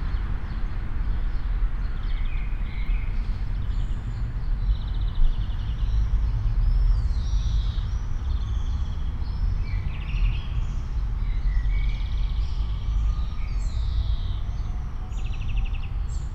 all the mornings of the ... - jun 10 2013 monday 07:11
Maribor, Slovenia, 10 June